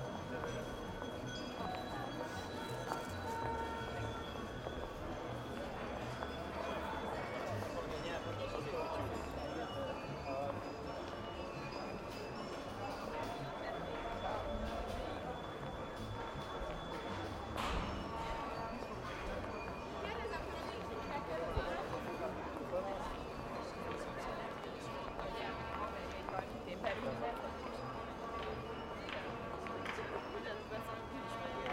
Budapest, Vorosmarty Square, Christmas Fair 2010
Váci St, Hungary, 26 November 2010, 16:48